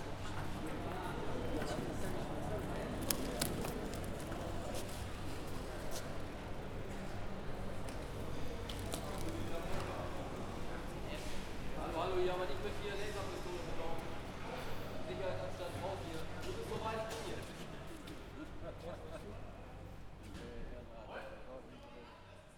Bremen, vegetable/fruit market
the halls were almost empty at that time as most business is done much earlier. people were packing their goods and cleaning was being done
Bremen, Germany